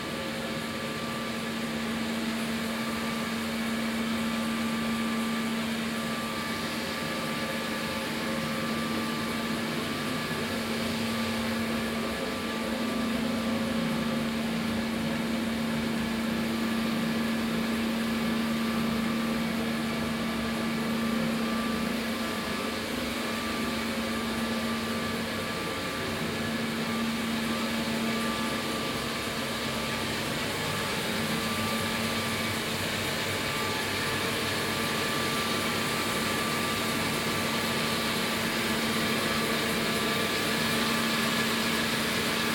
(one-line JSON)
{"title": "Scierie d'en Haut, Saint-Hubert, Belgique - Turbines in the micro hydroelectric power plant", "date": "2022-05-28 12:30:00", "description": "Turbines dans la microcentrale hydroélectrique du Val de Poix.\nTech Note : SP-TFB-2 binaural microphones → Olympus LS5, listen with headphones.", "latitude": "50.02", "longitude": "5.29", "altitude": "328", "timezone": "Europe/Brussels"}